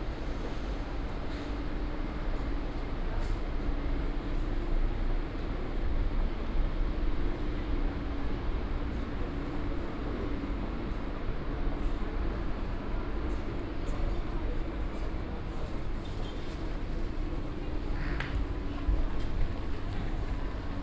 essen, main station, track 11
A female anouncemet, a male passenger voice and the arrival of a train at track 11 of Essen main station. Finally an alarm sound for the departure.
Projekt - Stadtklang//: Hörorte - topographic field recordings and social ambiences
Essen, Germany